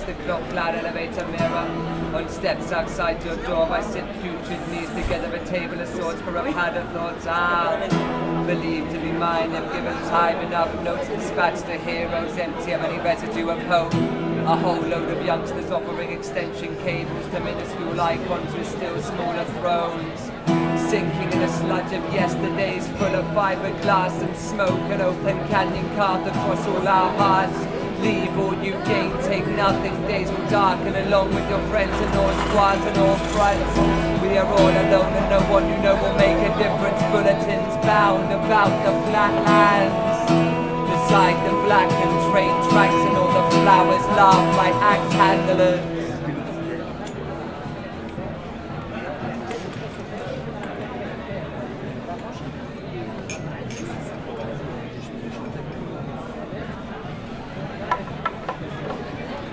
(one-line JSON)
{"title": "Rue Sibie - Bar de Maraîchers", "date": "2011-10-21 12:09:00", "description": "A Band of Buriers / hapenning N°1 / Part 7", "latitude": "43.30", "longitude": "5.39", "altitude": "53", "timezone": "Europe/Paris"}